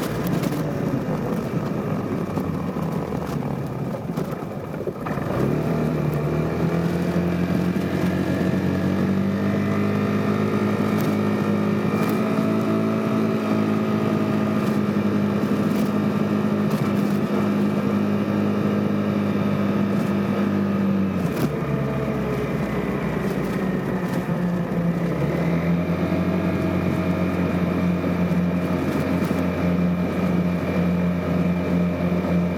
East Bay Vespa ride
Riding home from work on Vespa
CA, USA